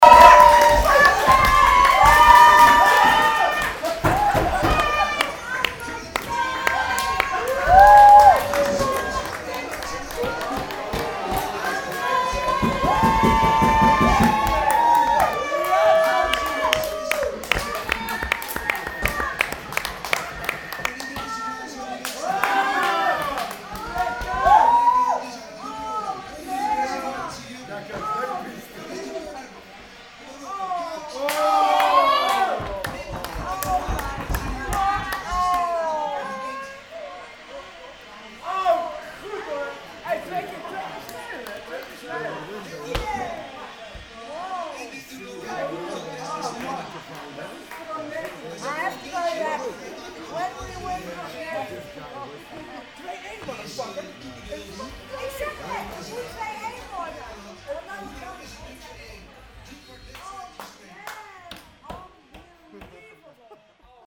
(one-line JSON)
{"title": "amsterdam, marnixstraat, melkweg cafe", "date": "2010-07-10 19:19:00", "description": "at the melkweg cafe in the afternoon, watching the soccer wm quarterfinal with a group of dutch fans - goalscreams\ncity scapes international - social ambiences and topographic field recordings", "latitude": "52.36", "longitude": "4.88", "altitude": "-1", "timezone": "Europe/Amsterdam"}